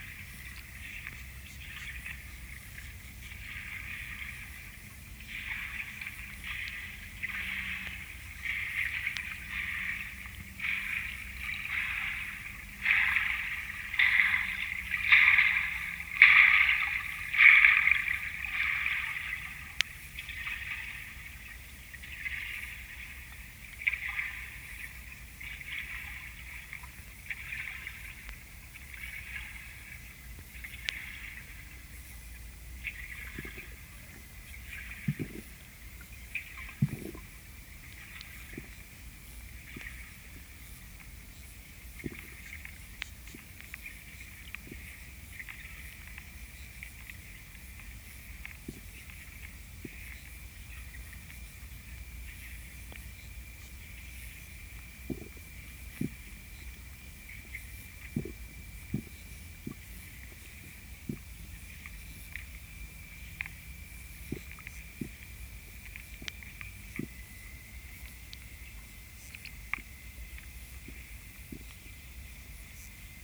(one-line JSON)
{"title": "River Vltava and traffic, U Ledáren, Praha, Czechia - Underwater recording at the same spot and time", "date": "2022-05-14 15:04:00", "description": "In contrast to the above water recording it is possible to hear the passing canoeist's paddle strokes and the small waves created by the movement.", "latitude": "50.03", "longitude": "14.40", "altitude": "189", "timezone": "Europe/Prague"}